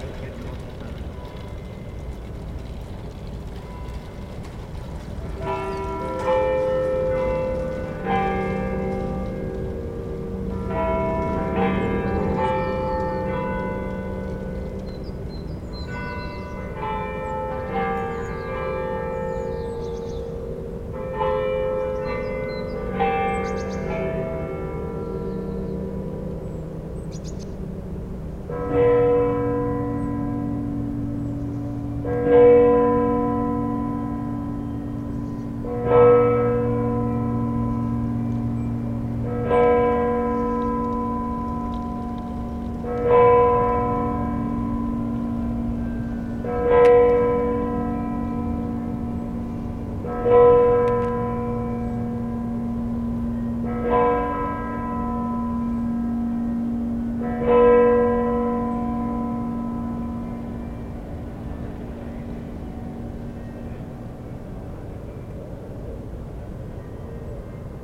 On the center of Copenhagen, distant sound of the bells, ringing 9AM. The courthouse walls make a curious reverberation.
18 April, 09:00